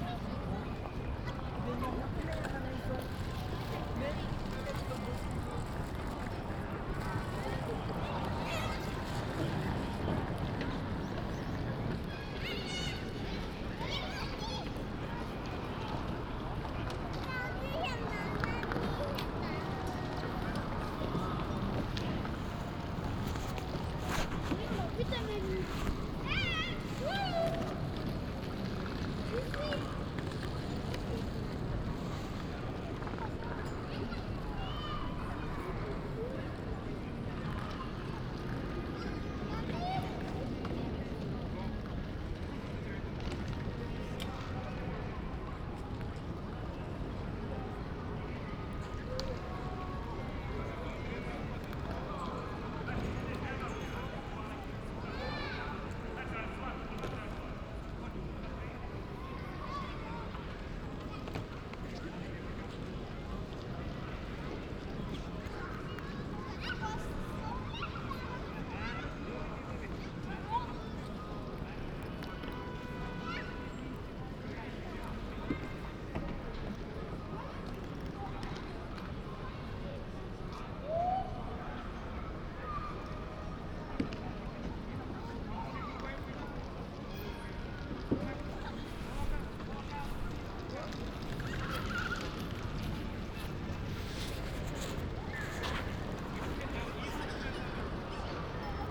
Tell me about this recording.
"Sunday walk at railway station in Paris in the time of COVID19": Soundwalk, Sunday, October 18th 2020: Paris is scarlett zone for COVID-19 pandemic. Walking in the Gare de Lyon railway station before taking the train to Turin. Start at 1:12 p.m. end at 1:44 p.m. duration 32’12”, As binaural recording is suggested headphones listening. Path is associated with synchronized GPS track recorded in the (kmz, kml, gpx) files downloadable here: For same set of recordings go to: